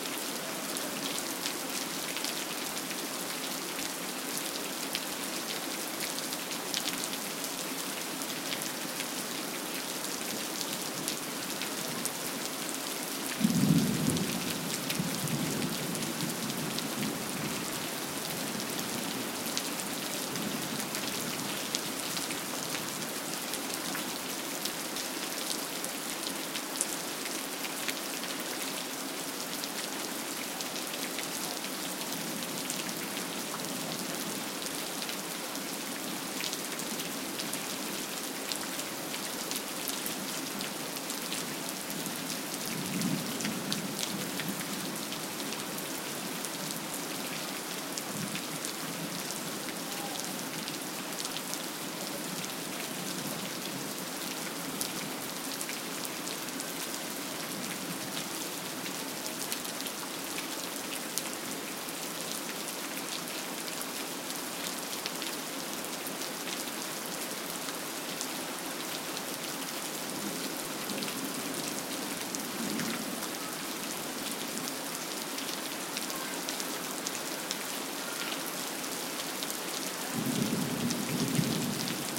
SQN, Brasília, DF, Brasil - Rain and Thunders
Rain and thunders in the nightfall in Brasília, Brazil.
Brasilia, Federal District, Brazil, 14 February 2014, ~18:00